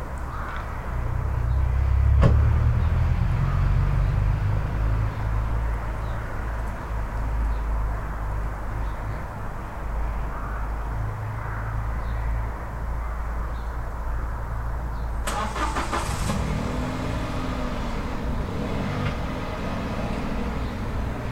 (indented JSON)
{
  "title": "Innsbrucker Straße, Magdeburg - Cars, birds, leaves, background highway",
  "date": "2018-12-23",
  "description": "Dead end side street, Tascam-DR07. Normalization, very light compression, noise removal.",
  "latitude": "52.11",
  "longitude": "11.61",
  "altitude": "57",
  "timezone": "Europe/Berlin"
}